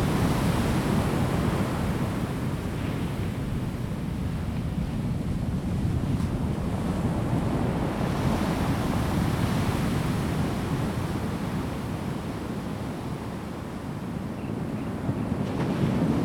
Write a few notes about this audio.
Chicken crowing, Bird cry, Sound of the traffic, Sound of the waves, Rolling stones, Zoom H2n MS+XY